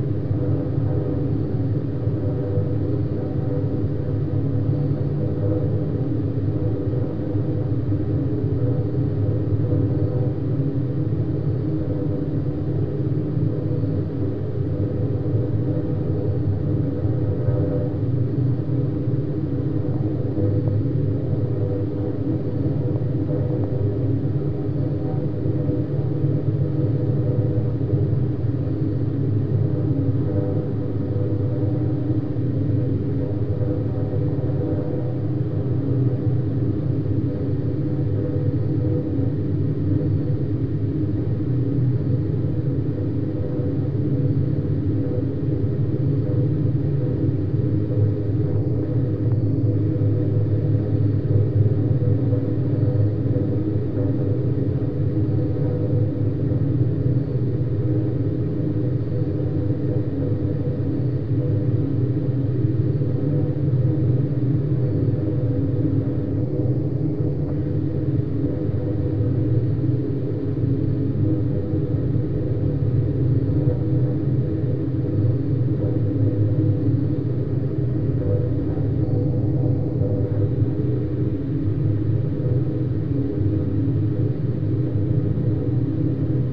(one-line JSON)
{"title": "Near the hydro-electric dam", "date": "2017-08-25 20:00:00", "description": "Vibrations from nearby the 의암 dam. Due to prolonged heavy rainfall the dam has been opened to allow a large volume of water to flow down the northern Hangang river system.", "latitude": "37.84", "longitude": "127.68", "altitude": "86", "timezone": "Asia/Seoul"}